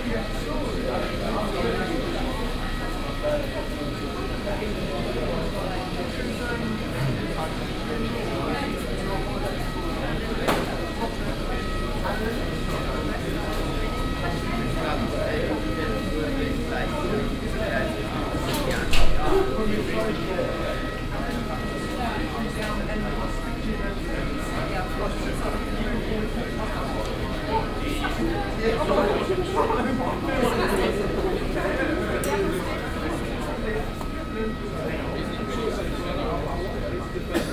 Airport Berlin Schönefeld SFX, Germany - at gate 65, waiting for departure
ambience at gate65, terminal B, airport Berlin Schönefeld, waiting or departure, volume in room decreases
(Sony PCM D50, OKM2 binaural)